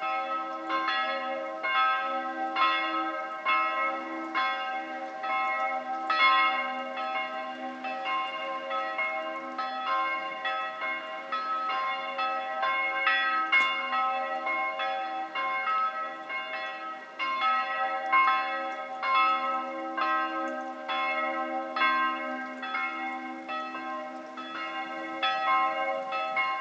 Faistenoy, Oy-Mittelberg, Deutschland - church bells and melt water in the village
TASCAM DR-100mkII with integrated Mics
Oy-Mittelberg, Germany, 21 October 2014